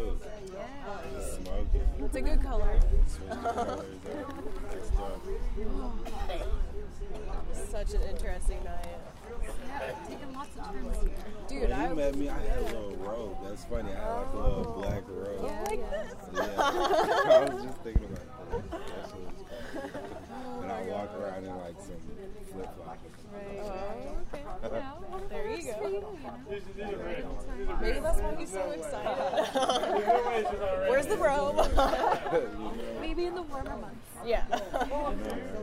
B St, San Rafael, CA, USA - rap battle and conversation
outside libation bottleshop in downtown san rafael. rap battle between local guys. some of them smoke weed. a few separate individuals talk about what it's like to work nearby.